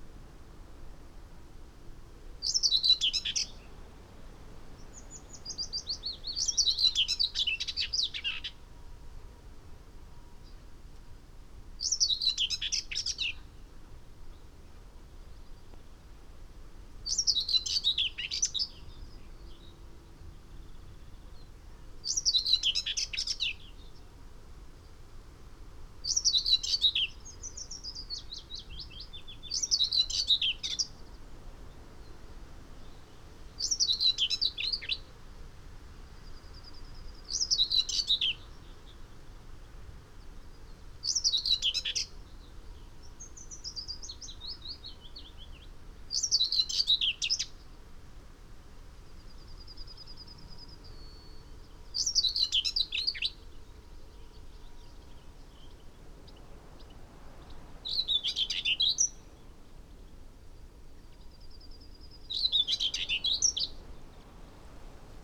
Whitethroat song soundscape ... SASS on tripod ... bird song ... call ... from ... willow warbler ... song thrush ... carrion crow ... wren ... yellowhammer ... wood pigeon ... background noise ...